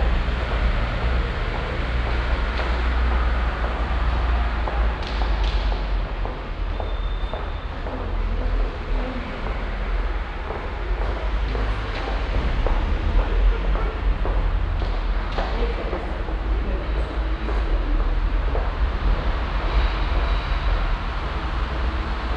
morgens im parkhaus - motorenresonanzen, schritte, lüftungsrohe
soundmap nrw:
social ambiences/ listen to the people - in & outdoor nearfield recordings